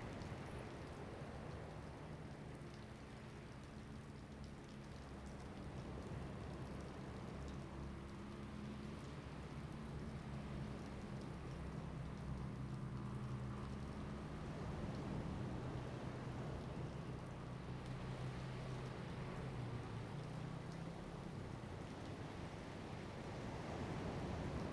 Koto island, Taiwan - Standing on the embankment side
Standing on the embankment side, Aircraft flying through, Sound of the waves
Zoom H2n MS +XY
Lanyu Township, Taitung County, Taiwan